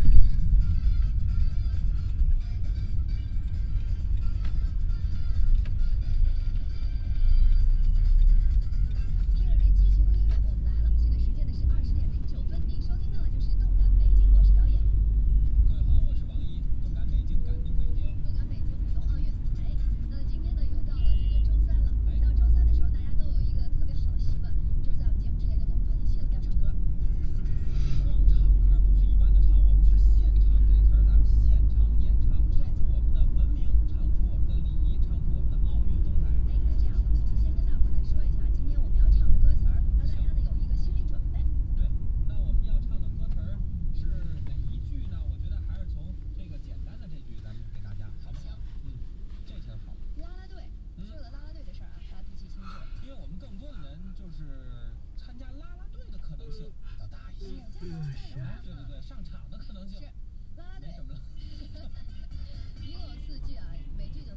beijing, taxifahrt
beijing cityscape - taxi driving in the city is normal, because distances are far - this is one of 70.000 cabs driving around daily
project: social ambiences/ listen to the people - in & outdoor nearfield recordings